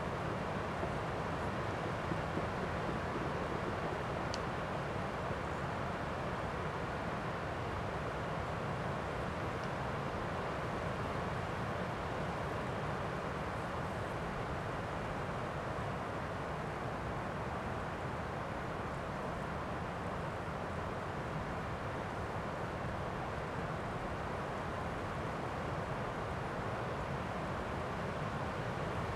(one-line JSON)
{"title": "Lithuania, Sudeikiai, reed in wind", "date": "2011-04-16 16:30:00", "description": "wind gusts in reed, just before the spring rain", "latitude": "55.60", "longitude": "25.70", "altitude": "136", "timezone": "Europe/Vilnius"}